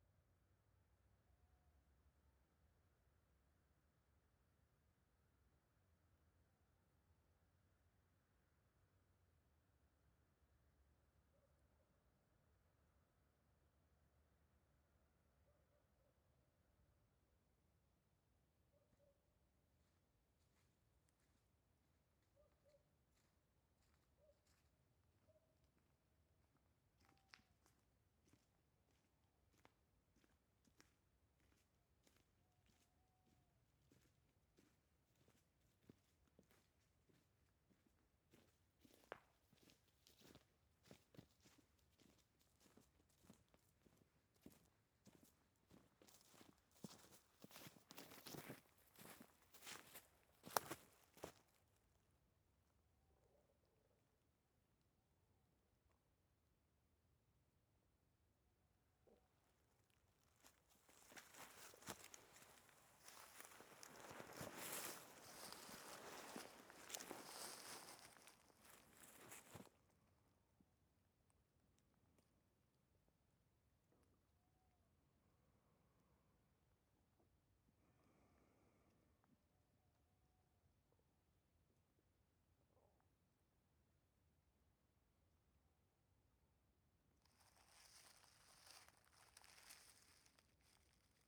Chiusdino Provinz Siena, Italien - immersed in silence / presqueRien pour La
soundscape composition for a dear friend. 2012.04.04, sounddevice 722, 2 x km 184 / AB.